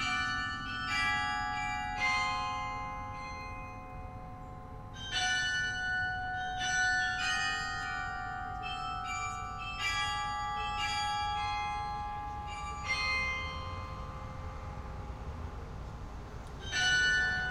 Tiergarten, Berlin, Germany - Carillon in Berlin
Carillon in Berlin, near Tiergarten. One of the biggest of its kind in the world. And quite impressive when repertoire like this is being played.
(zvirecihudba.cz)